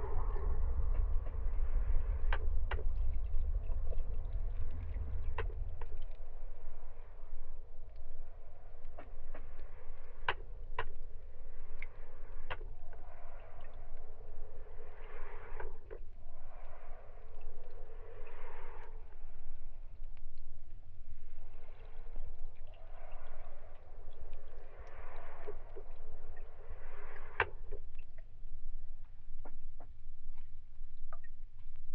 Laan van Meerdervoort, Den Haag - hydrophone rec from the bridge
Mic/Recorder: Aquarian H2A / Fostex FR-2LE
The Hague, The Netherlands, April 2009